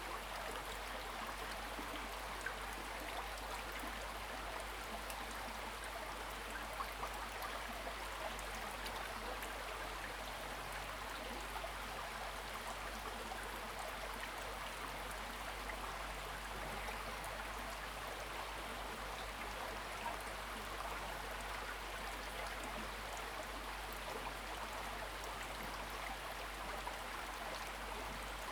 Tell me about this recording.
streams, Small streams, Zoom H6 XY